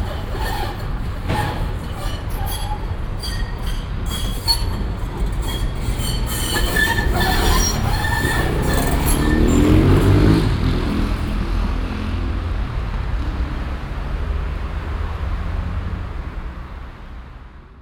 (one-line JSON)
{"title": "cologne, ubierring, ampel + strassenbahn", "date": "2008-09-26 10:31:00", "description": "klicken der ampel, verkehr, stark quietschende strassenbahn in gleiskurve, mittags\nsoundmap nrw:", "latitude": "50.92", "longitude": "6.97", "altitude": "52", "timezone": "Europe/Berlin"}